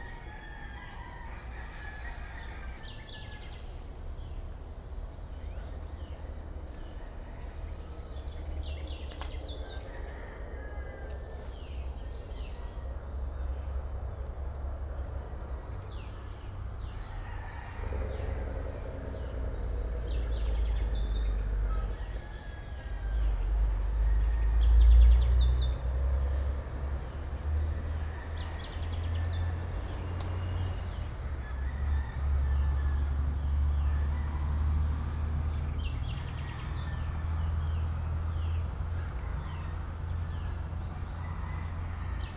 Villavicencio, Meta, Colombia - Amanecer llanero
Singing birds all over the place very early in the morning.
For a better audio resolution and other audios around this region take a look in here:
José Manuel Páez M.